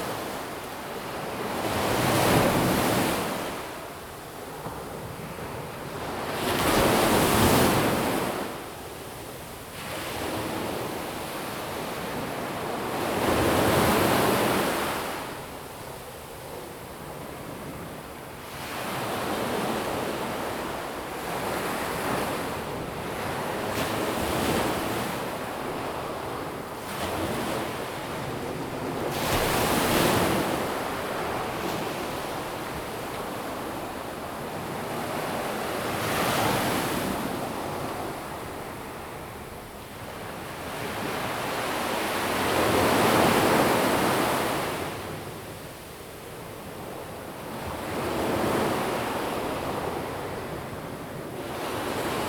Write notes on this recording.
Big Wave, Sound of the waves, Zoom H2n MS+H6 XY